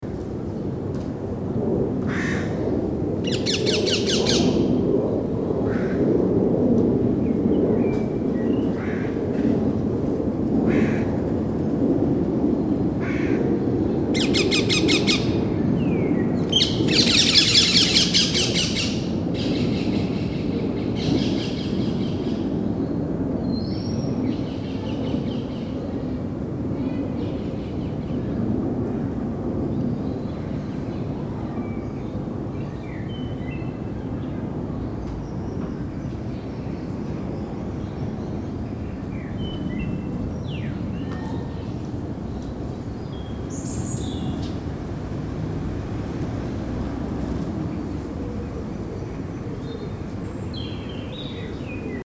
Birds in centre The Hague - Crows and Rose-ringed Parakeets recorded in the centre of The Hague (Den Haag).
Crows and Rose-ringed Parakeets recorded in the centre of The Hague (Den Haag).
Den Haag, The Netherlands, 18 July 2010